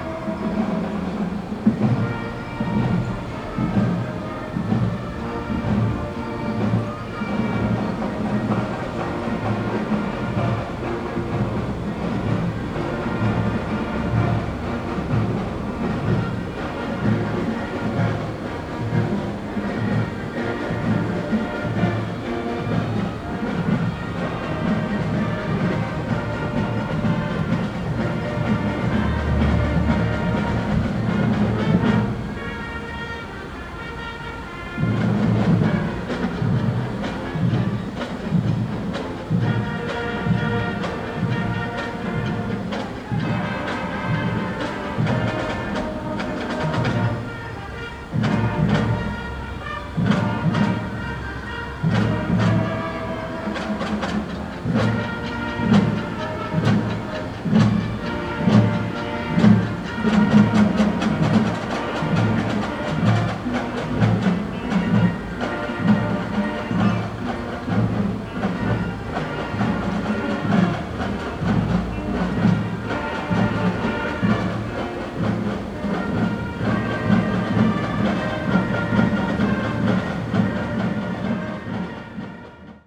Nengren St., Xindian Dist., New Taipei City - Pipe Band
Pipe Band, High School pipe band practice
Zoom H4n + Rode NT4